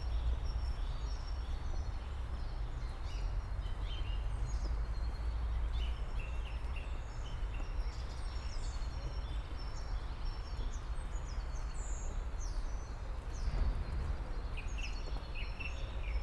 Schloßpark Buch, Berlin - Schlossparkgraben, water outflow, ambience
Berlin, Schlosspark Buch. The water of river Panke feeds two ponds in the park, which is a natural reserve (Naturschutzgebiet) together with the nearby Buch forest.
(Sony PCM D50, DPA4060)
Berlin, Germany, 27 March 2019, 09:00